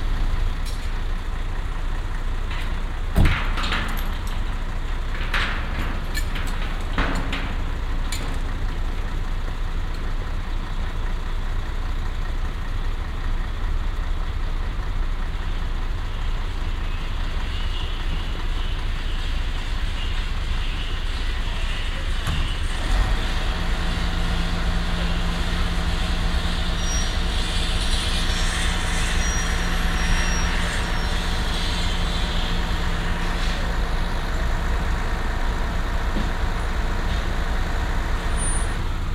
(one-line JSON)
{"title": "haan, zum alten güterbahnhof, div. fahrzeuge", "description": "aufnahme nachmittags im frühjahr 07\nsoundmap nrw:\nsocial ambiences, topographic fieldrecordings", "latitude": "51.19", "longitude": "7.00", "altitude": "135", "timezone": "GMT+1"}